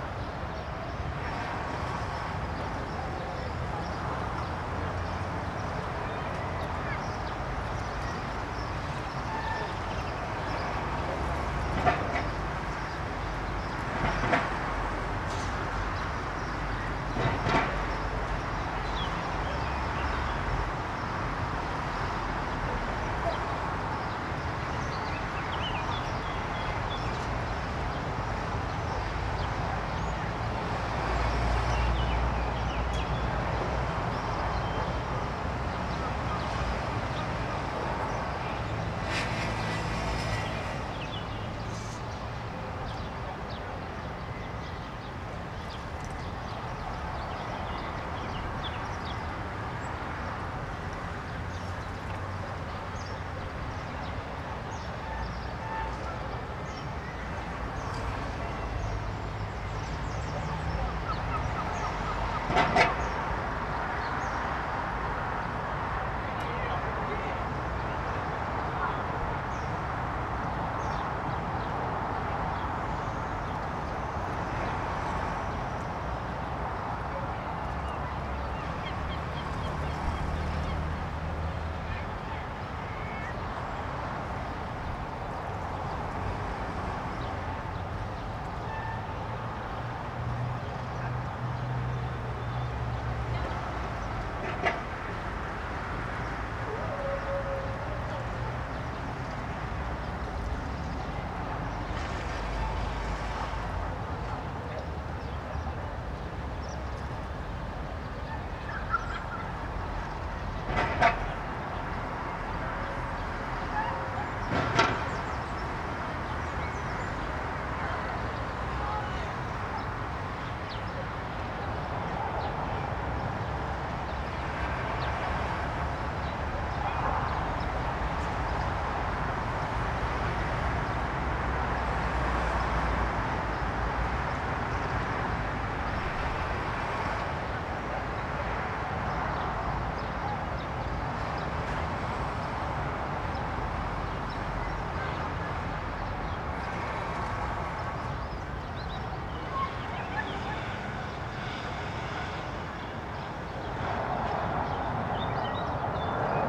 evening city ambience from the highest point in the city